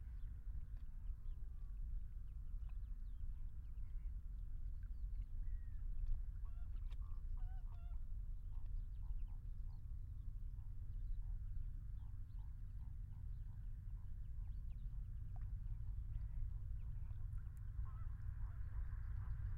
Etang des Vaccarès, eastern shore, Saintes-Maries-de-la-Mer, Frankreich - Flamingoes, waves and traffic
On the eastern shore of the Etang des Vaccarès at noon. In between various sounds of cars and motorbikes passing on the gravel road behind, sounds of waves and calls of the distant flamingoes can be heard. Binaural recording. Artificial head microphone set up on some rocks on the shore, about 3 meters away from the waterline. Microphone facing west. Recorded with a Sound Devices 702 field recorder and a modified Crown - SASS setup incorporating two Sennheiser mkh 20 microphones.
France métropolitaine, France, 19 October 2021, ~12:00